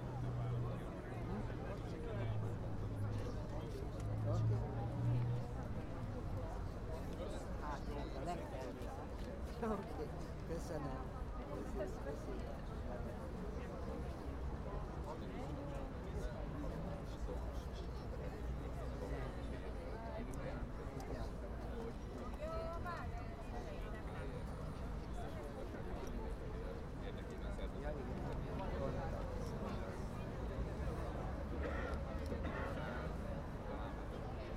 Atmosphere before Demonstration Budapest - Atmosphere before Demonstration
A guy called Ahmed was convicted for ten years because of 'terrorism'. He spoke through a megaphone during refugees crossed the former closed border to Hungary and threw three objects, but it is unclear if he hit someone. Named after the village 'Racoszi' the eleven imprisoned refugees are supported by a campaign of the group MIGSZOL. Recorded with a Tascam DR-100